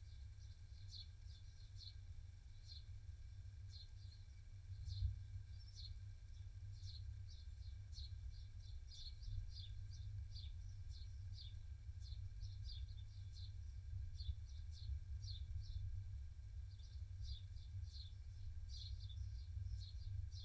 thunderstorm in a bottle ... sort of ... pair of lavalier mics inside a heavy weight decanter ... bird calls ... song from ... song thrush ... blackbird ... house sparrow ...